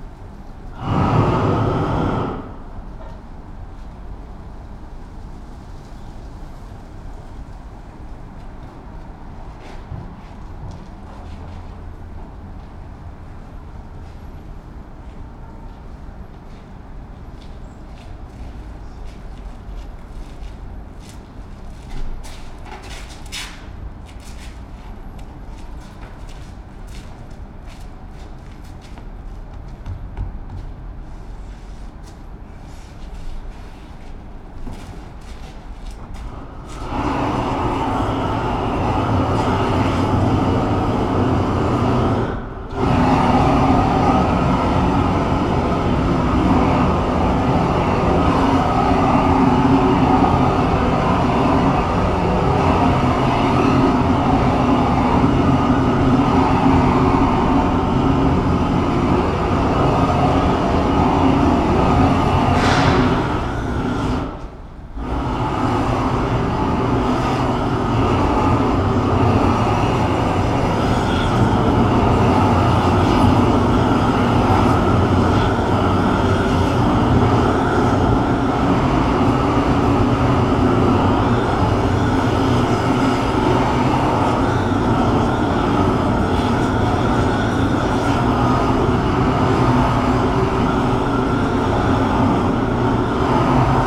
Brussels, Rue Berckmans, workers on a roof
Workers putting macadam roofing for water isolation.